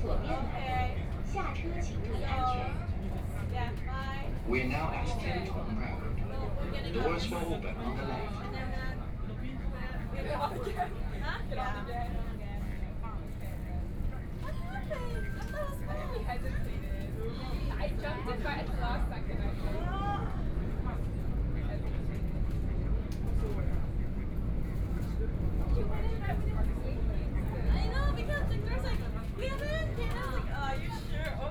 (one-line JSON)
{"title": "Shanghai, China - Line 10 (Shanghai Metro)", "date": "2013-11-23 19:01:00", "description": "from East Nanjing Road Station to Youdian Xincun Station, Binaural recording, Zoom H6+ Soundman OKM II", "latitude": "31.26", "longitude": "121.48", "altitude": "7", "timezone": "Asia/Shanghai"}